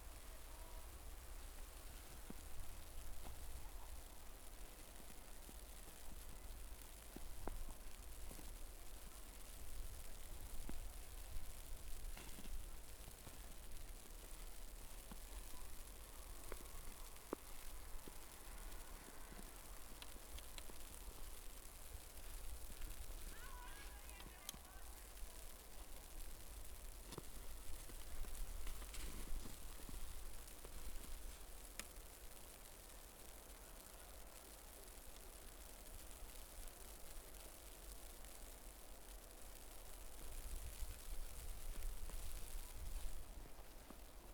Snow falls on my umbrella as I record the chiming of the bells.Recorded with Zoom H4n.